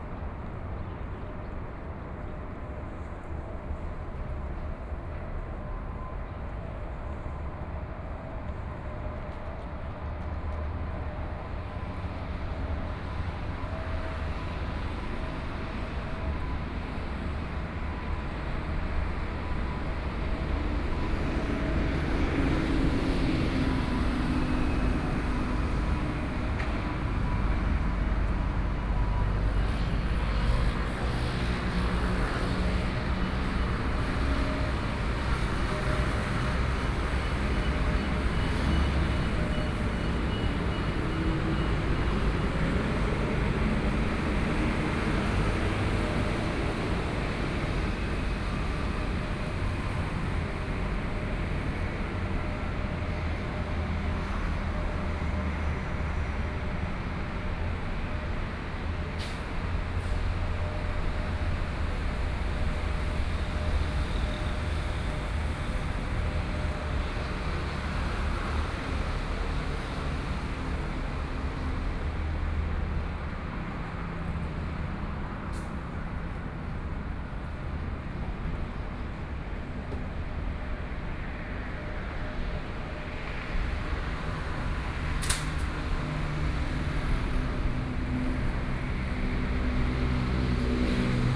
walking on the Road, Traffic Sound, Aircraft flying through
5 May 2014, 14:29